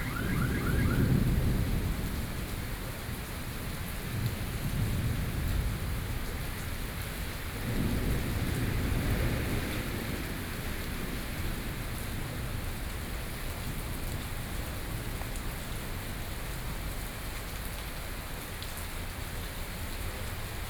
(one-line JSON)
{"title": "Taipei, Taiwan - Thunderstorm", "date": "2013-06-23 16:03:00", "description": "Thunderstorm, Sony PCM D50 + Soundman OKM II", "latitude": "25.05", "longitude": "121.52", "altitude": "24", "timezone": "Asia/Taipei"}